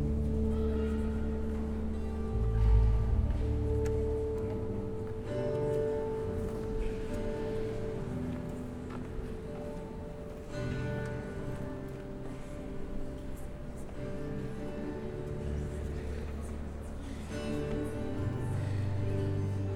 people celebrating mass on sunday. strange choir singing softly...